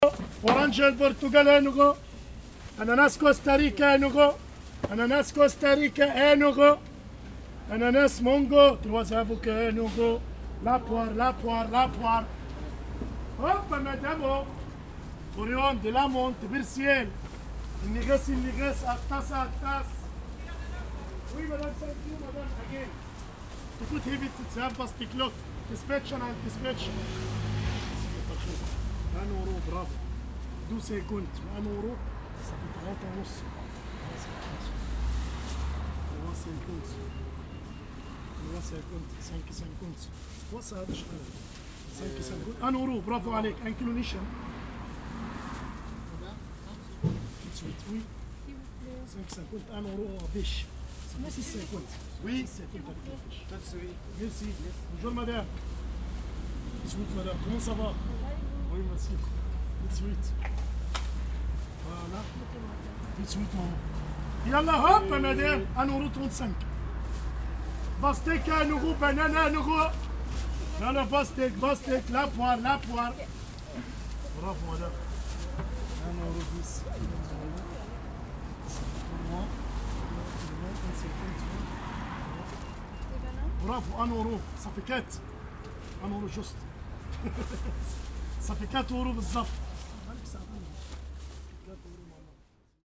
Père-Lachaise, Paris, France - Pere-Lachaise Market at Ménilmontant
Pere-Lachaise Market
Bd de Menilmontant, between rue des Panoyaux and rue des Cendriers.
Tuesday, Friday, 7 a.m. to 2.30 p.m.
Zoom H4n
8 August, 10:30